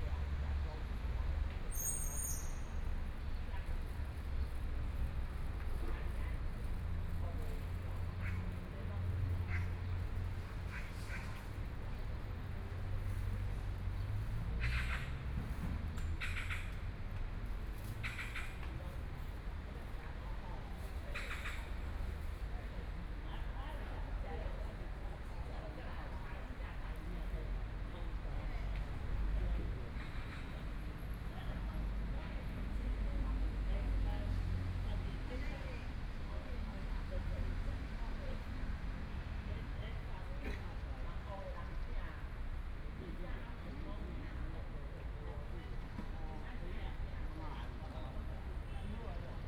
NongAn Park, Taipei City - in the Park
Environmental sounds, Traffic Sound, Birds, Voice chat between elderly
Zhongshan District, Taipei City, Taiwan, April 2014